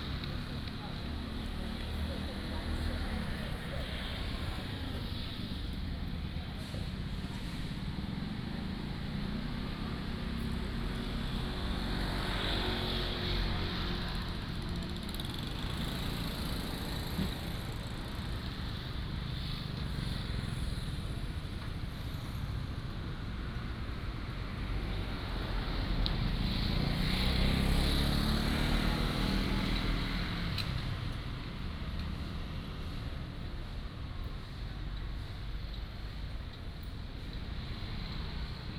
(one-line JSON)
{"title": "Beichen St., Magong City - Garbage truck", "date": "2014-10-21 13:23:00", "description": "Garbage truck, Next to the market", "latitude": "23.57", "longitude": "119.57", "altitude": "20", "timezone": "Asia/Taipei"}